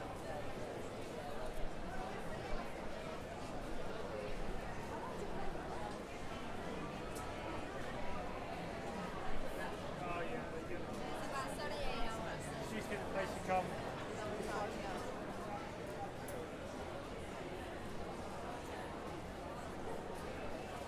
Greenwich Market, London, UK - A stroll through the market...
A walk through the indoor market in Greenwich. A comforting collage of ambient crowd burbling, snippets of conversation, and various music sources. Finally, we emerge back onto the street to find a trio of street musicians limbering up after a cigarette break. As you'll hear, my partner, Ulrika, didn't find the hand made soap she was looking for. Apparently, the stall-holder doesn't work Sundays.
1 August 2021, 13:00